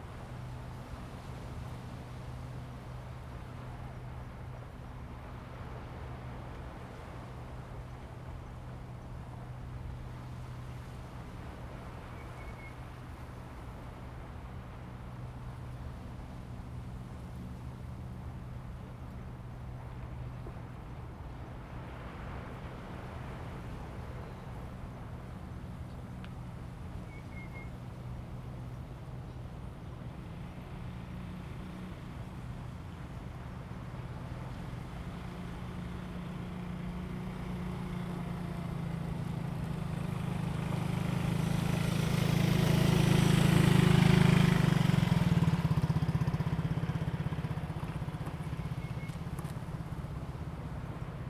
Xikou, Tamsui Dist., New Taipei City - Grove
Grove, Bird calls, Sound of the waves
Zoom H2n MS+XY